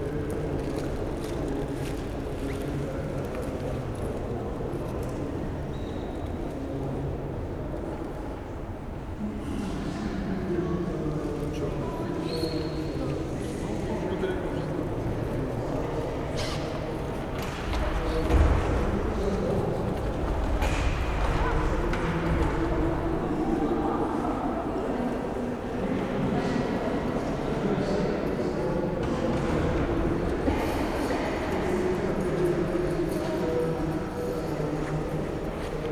wiesbaden, kurhausplatz: kurhaus - the city, the country & me: foyer
visitors in the foyer topped by a 21 m high dome
the city, the country & me: may 6, 2016
Wiesbaden, Germany, 6 May 2016